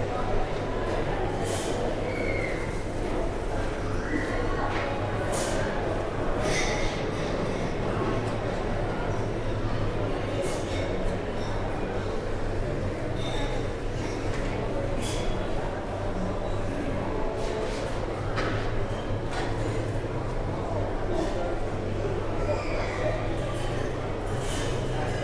Motorway service station, Downside, Cobham, Surrey, UK - Motorway service stations sound like swimming pools
Pausing at the service station on the M25 home, we were sitting having our coffees when I realised that the service station soundscape really reminded me of a huge swimming pool. The hum of electricity, the fraught children yelling, the huge expanses of glass reflecting all the sounds... ok the coffee-making sounds are less swimming-pool like but the din of many people in a large, reflective space was quite astounding. What a soup of noises. I drank my coffee and tuned in to the soup. Weirdly, you can't see the services at all on the aporee map; I think the satellite imagery predates this build. It feels very strange to overlay this very industrial, car-related racket onto a green field site. But I did double check the post-code and this is where the sound is. Maybe in thousands of years time aporisti will overlay this recording with the sounds of birds and trees once again.